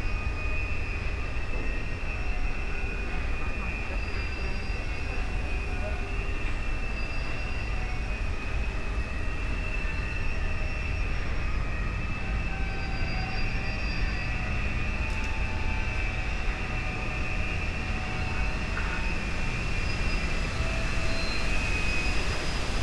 Spreepark, Plänterwald, Berlin, Germany - winds, turning wheel
high fence, standing still, strong winds through tree crowns and colossus red wheel
Sonopoetic paths Berlin